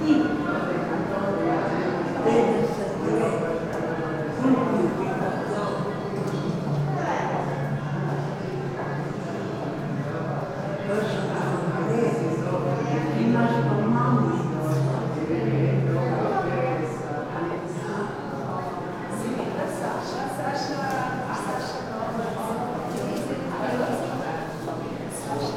Muzej norosti, Museum des Wahnsinns, Trate, Slovenia - voices
Zgornja Velka, Slovenia, 20 June 2015, 19:57